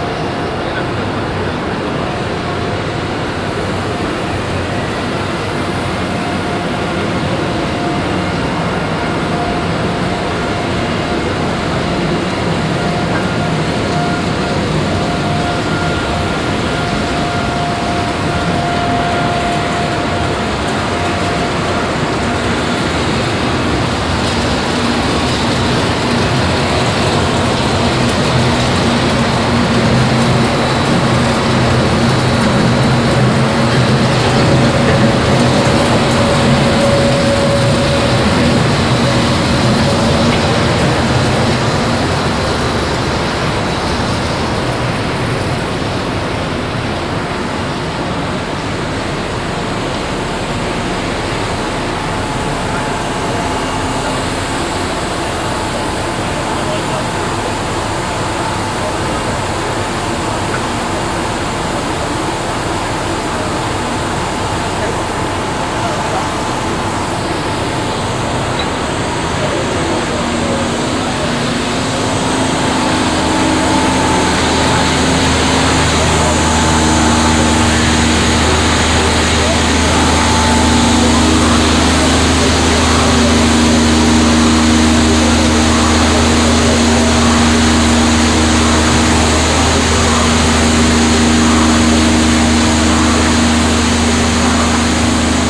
Stockum, Düsseldorf, Deutschland - düsseldorf, trade fair, hall 16
Inside hall 16 of the Düsseldorf trade fair during the DRUPA. Soundwalk through the hall recording the sound of of different kind of printing machines.
soundmap nrw - social ambiences and topographic field recordings
Düsseldorf, Germany